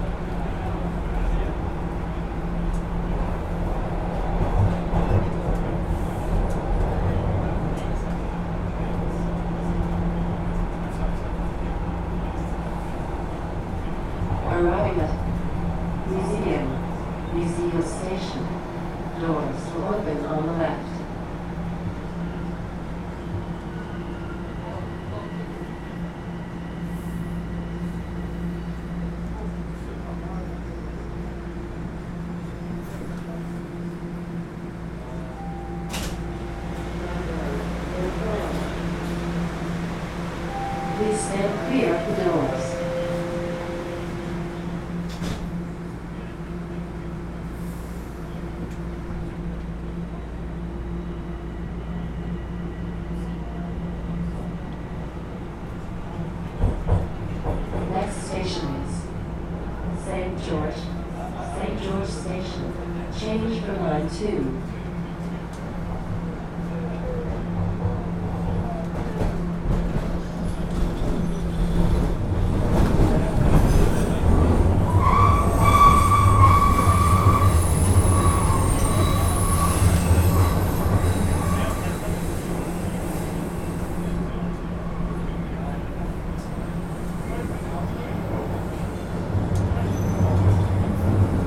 St Patrick Station, Toronto, ON, Canada - Toronto Subway, from St. Patrick to Spadina
Recorded while taking a TTC subway train from St. Patrick station to Spadina station.